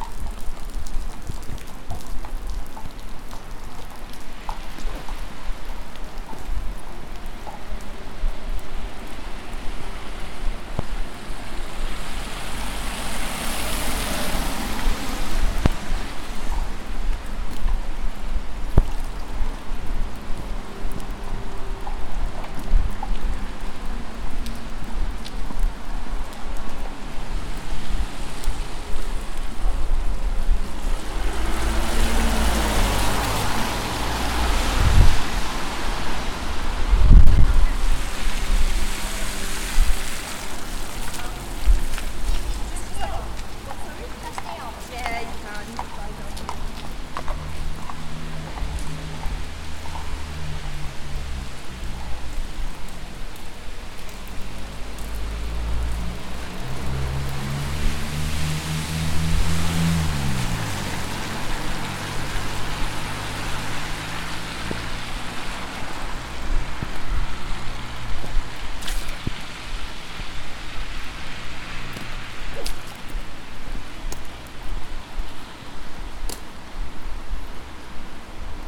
{"title": "Byker Bridge, Newcastle upon Tyne, UK - Stepney Bank", "date": "2019-10-13 16:01:00", "description": "Walking Festival of Sound\n13 October 2019\nHorses on Stepney Bank", "latitude": "54.98", "longitude": "-1.59", "altitude": "26", "timezone": "Europe/London"}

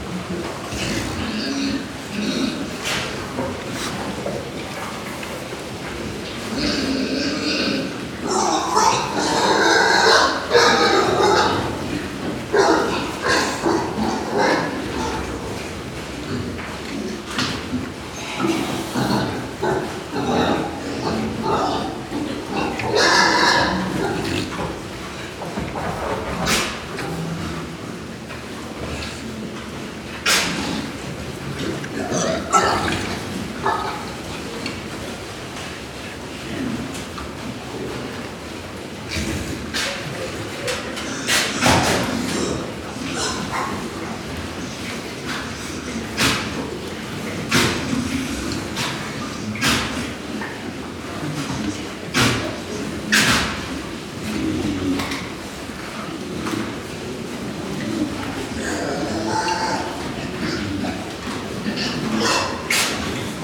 {"title": "SBG, Mas Reig - Granja de cerdos", "date": "2011-08-09 20:00:00", "description": "Ambiente en el interior de la granja.", "latitude": "41.99", "longitude": "2.16", "altitude": "833", "timezone": "Europe/Madrid"}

